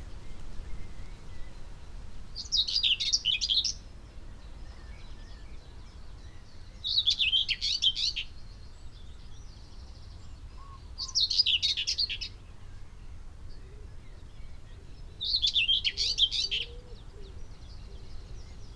Malton, UK - five singers in a tree ...
five singers in a tree ... xlr sass on tripod to zoom h5 ... bird song from ... chaffinch ... whitethroat ... chiffchaff ... yellowhammer ... blackbird ... plus song and calls from ... wren ... skylark ... wood pigeon ... crow ... linnet ... jackdaw ... linnet ... pheasant ... quite blustery ... background noise ...
England, United Kingdom